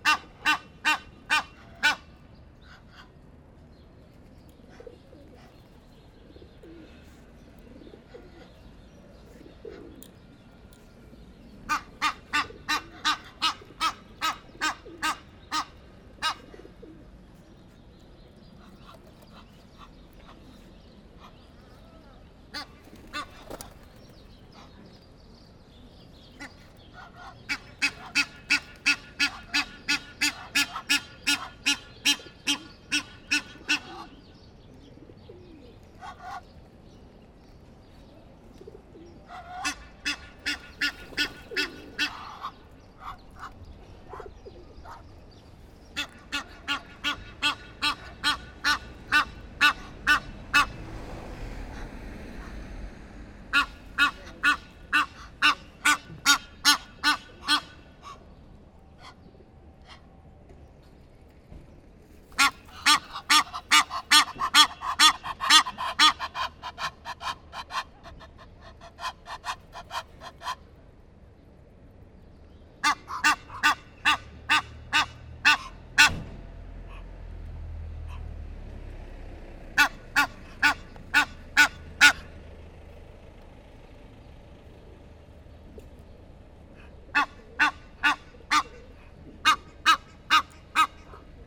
Amsterdam, Nederlands - Egyptian geese
Egyptian goose (Nijlgans in nederlands). Along a quiet canal, two geese are afraid because I'm near.